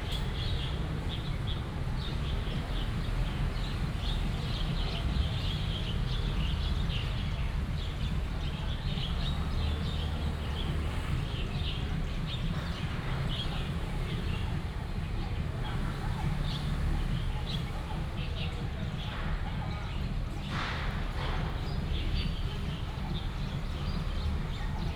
Xuefu Rd., Daya Dist., Taichung City - Bird call
Under the tree, Near the market area, Binaural recordings, Sony PCM D100+ Soundman OKM II
Daya District, Taichung City, Taiwan, 24 September 2017